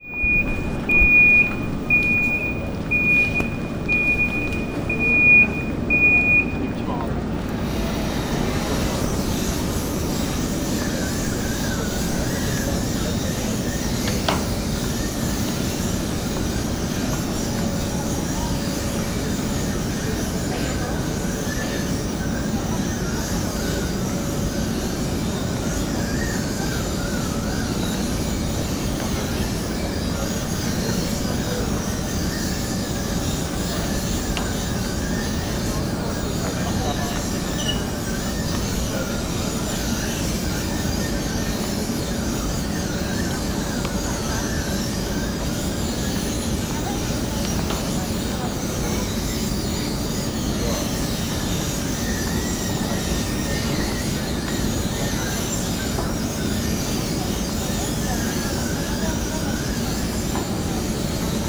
squeak og moving parts of the luggage belt. (sony d50)
Poland, 7 November